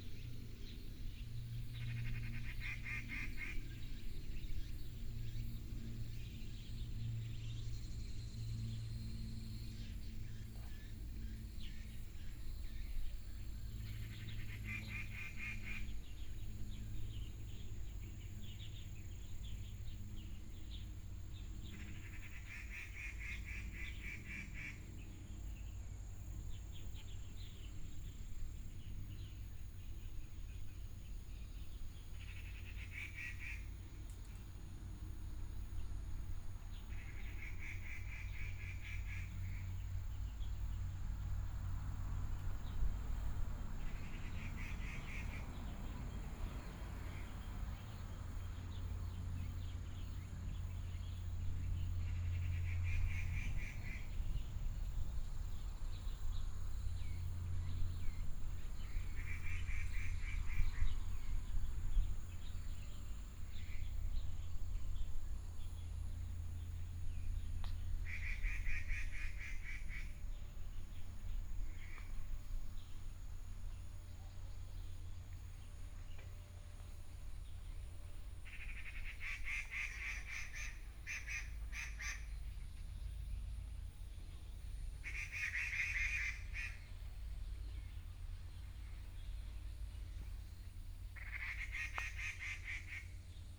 頭屋鄉北岸道路, Miaoli County - Birds call
Near the reservoir, sound of the plane, Birds sound, Binaural recordings, Sony PCM D100+ Soundman OKM II